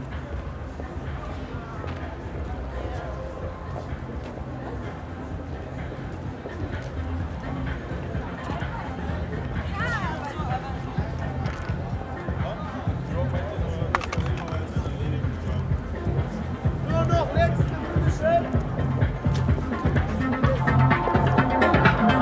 1st may soundwalk with udo noll
the city, the country & me: may 1, 2011